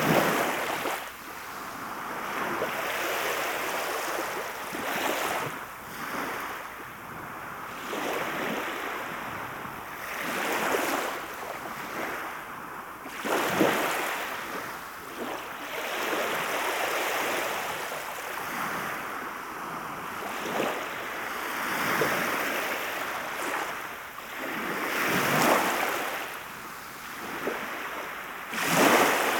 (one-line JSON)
{"title": "Play of the waves, White Sea, Russia - Play of the waves", "date": "2014-06-15 19:50:00", "description": "Play of the waves.\nПлеск волн.", "latitude": "65.83", "longitude": "40.52", "altitude": "9", "timezone": "Europe/Moscow"}